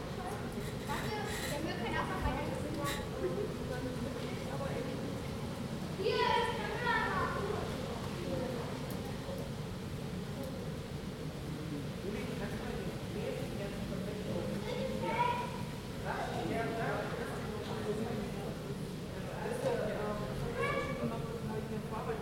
Universitätsring, Halle (Saale), Germany - 0x7f
Recorded from my window with a Zoom H2n.
I am trying to work. A child's birthday is held in the yard. City noises all around